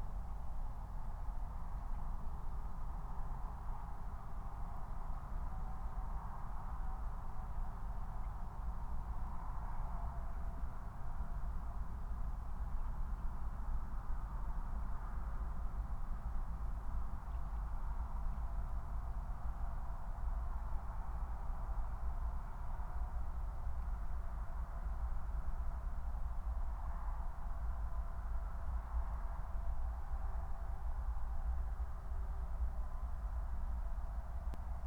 Moorlinse, Berlin Buch - near the pond, ambience
03:19 Moorlinse, Berlin Buch